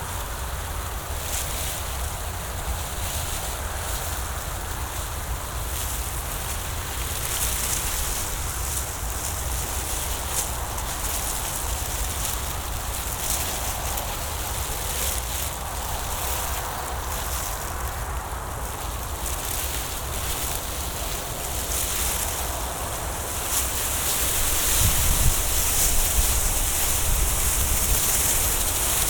installation Forteresse (2) de l'artiste UPGRAYYDD RECIDIVEToulouse, France - Une installation faite de couverture de survie dans le vent
Son pris dans une exposition sauvage "Crève Hivernale".
Je suis devant une installation de UPGRAYYED RECIDIVE : un abris fait de bandelette de plastique. Avec le temps, cet abris appelé "Forteresse" s'est détérioré et le vent s'est mis à agiter ces lambeaux de plastique. On dirait presque que ces forteresses sont vivantes et me murmurent des poèmes dans une langue inconnue.
Pour apprécier au mieux la prise son, écouter au casque car la prise son est binaurale (son à 360degré).
16 January 2017, 19:40